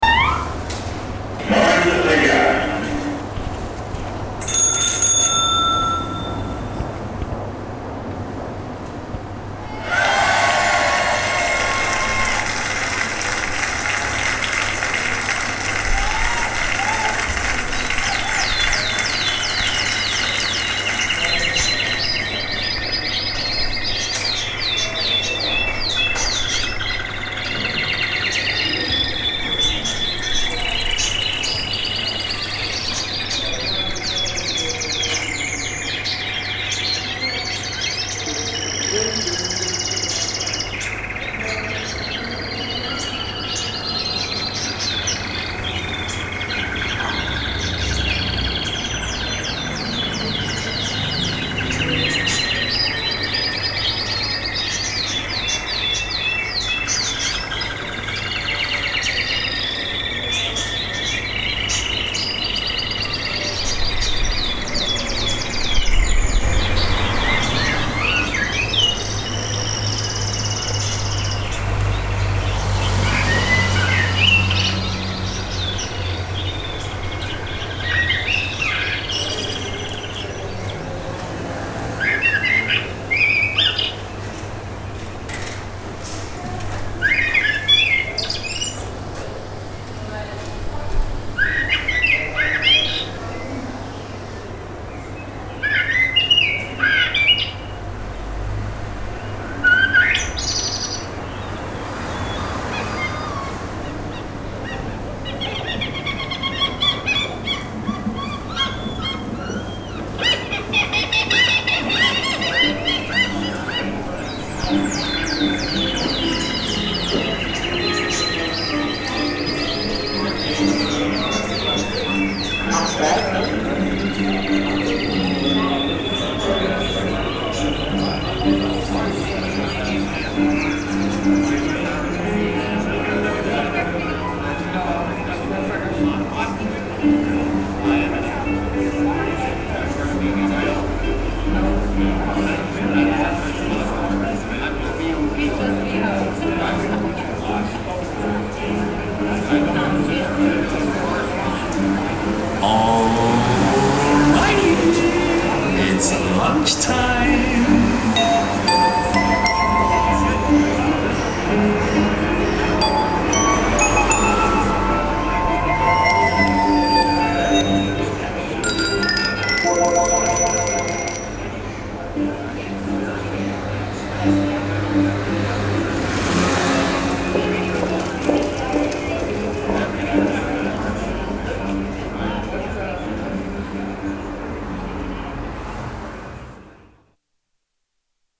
Amsterdam, Westerstraat - gallery
recorded in front of a gallery at westerstraat. theres a soundcollage playing at the entrance of the gallery, with local traffic passing by.
Amsterdam, The Netherlands